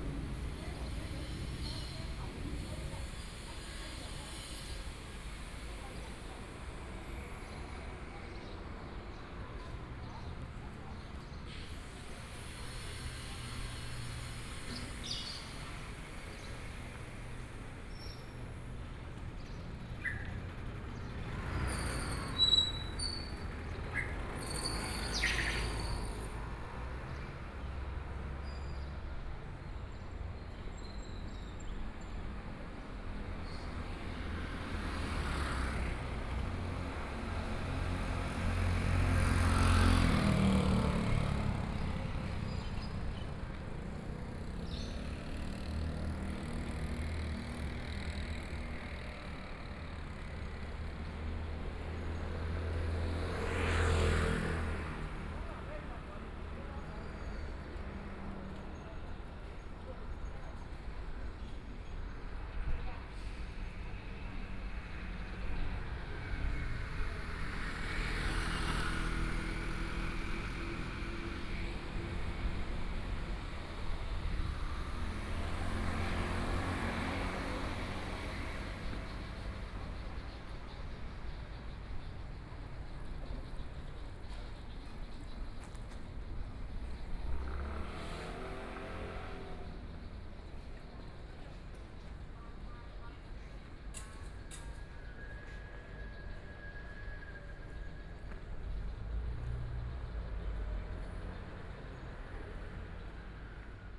walking in the Street, Traffic Sound, Sound from construction
Kaohsiung City, Taiwan, 14 May